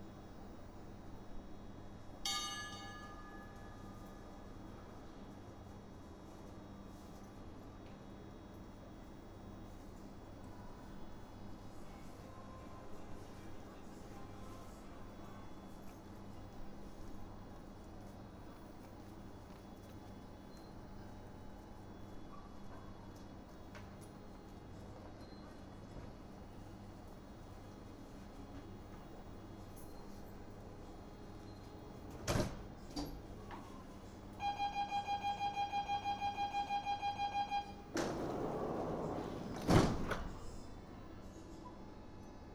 FGC Catalunya Station
Train station; short distance service. Lunchtime on a Saturday.
Barcelona, 22 January, 14:30